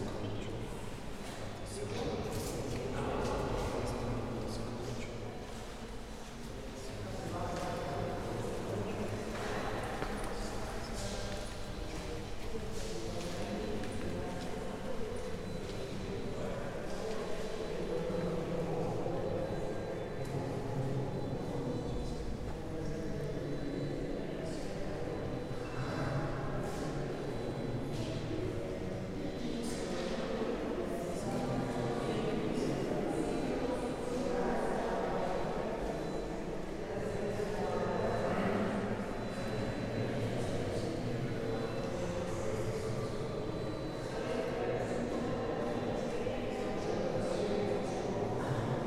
Estr. do Convento, Tomar, Portugal - Convento de Cristo main hall
Convento de Crsito in Tomar, main hall chrch, people talking, ressonating in the space. Recorded with a pair of Primo 172 capsules in AB stereo configuration onto a SD mixpre6.